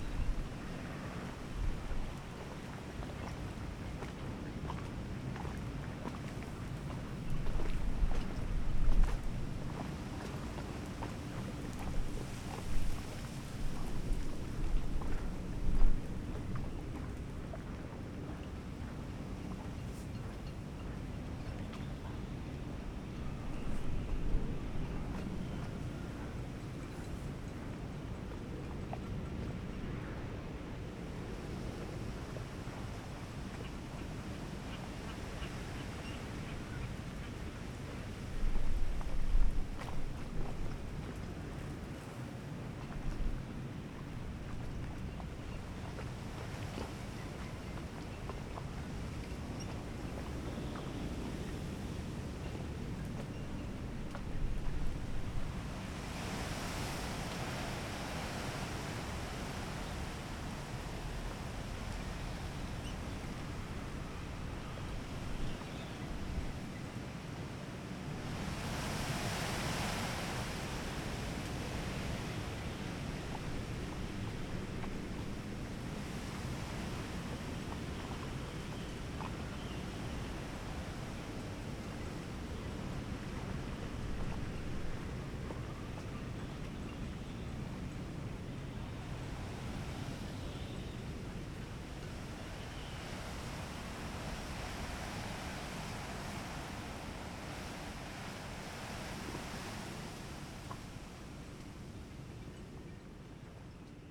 woudsend: midstrjitte - the city, the country & me: wooded area at the ship canal
stormy day (force 7-8), trees swaying in the wind, water laps against the bank
city, the country & me: june 13, 2013